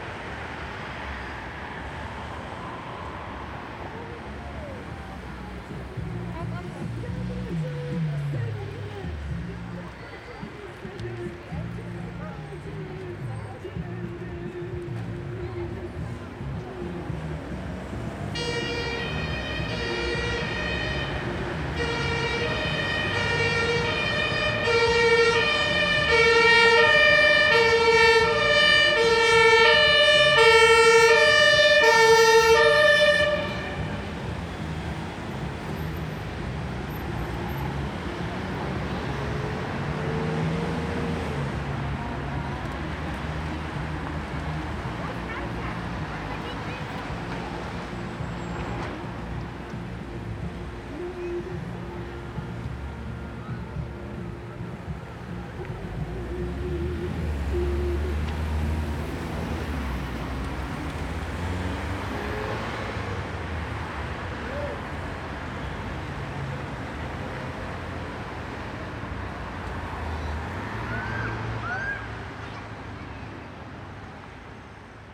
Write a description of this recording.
At U Pankstraße, crossroads Prinzenallee Badstraße. [Hi-MD-recorder Sony MZ-NH900 with external microphone Beyerdynamic MCE 82]